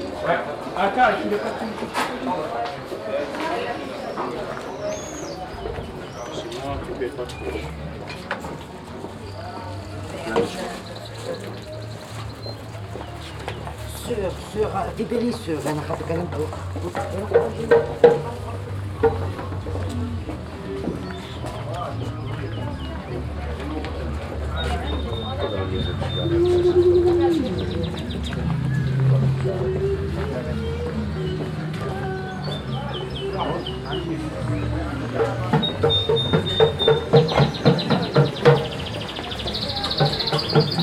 Souks, Tunis, Tunesien - tunis, medina, souks, soundwalk 01
Entering the Souks in the morning time. The sound of feets walking on the unregualar stone pavement, passing by different kind of shops, some music coming from the shops, traders calling at people and birds chirp in cages.
international city scapes - social ambiences and topographic field recordings